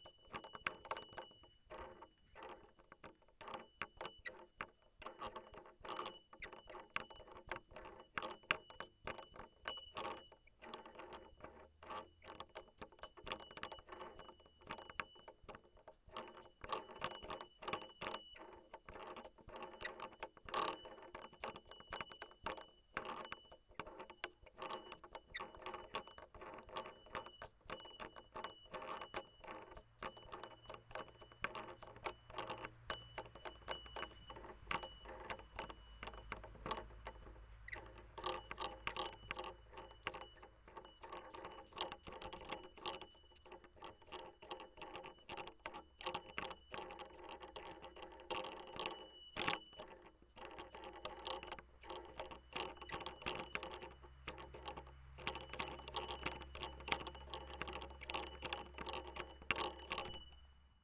Morasko Nature Reserve - washer
a sound of a metal washer nailed to a wooden pole i found in the forest (sony d50)